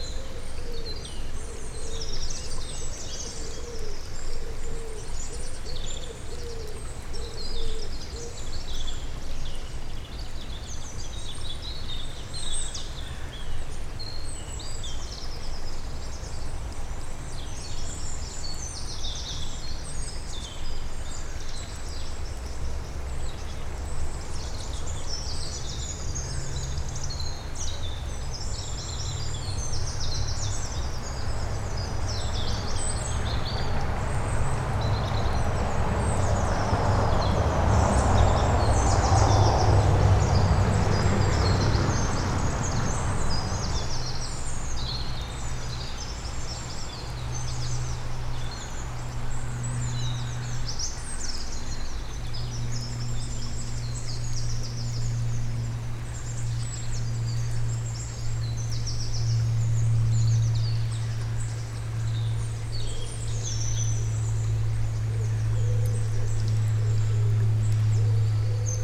the river is small here, a car is passing nearby.
SD-702, Me-64, NOS.
10 January, 15:20